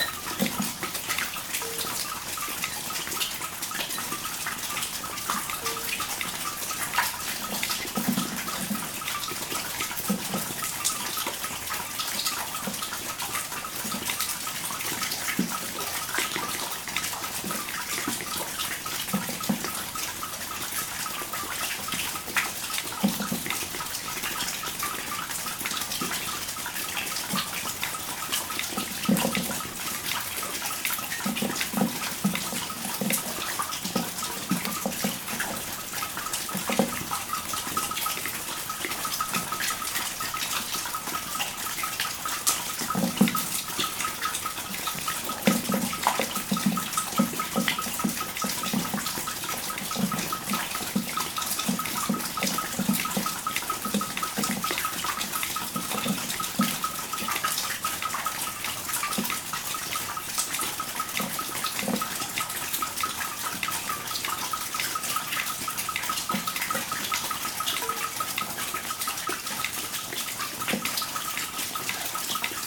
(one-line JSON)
{"title": "Rumelange, Luxembourg - Underground mine xylophone", "date": "2016-01-31 08:00:00", "description": "In an underground mine, a natural xylophone sound. Water is falling on thin wafers of calcite. This makes this amazing delicate sound.", "latitude": "49.47", "longitude": "6.00", "altitude": "426", "timezone": "Europe/Luxembourg"}